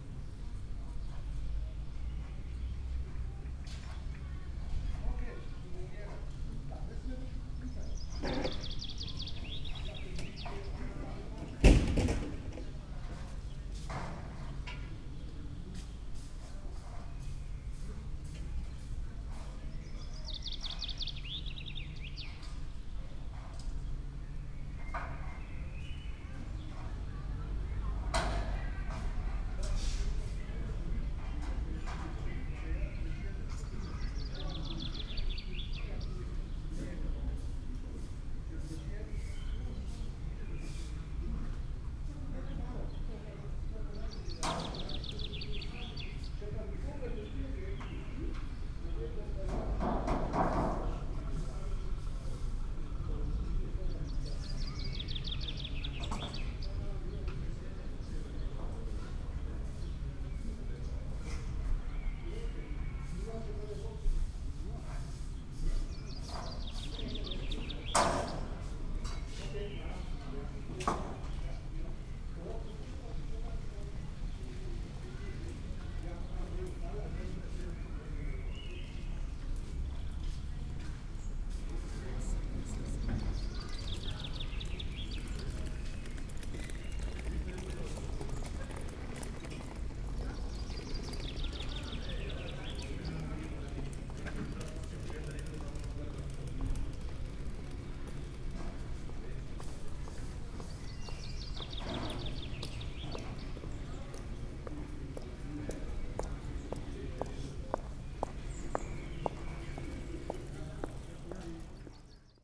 Maribor, Nova vas, Stantetova ulica - residential area ambience
ambience between two blocks of buildings. nothing special happened, which is nice sometimes.
(SD702 DPA4060)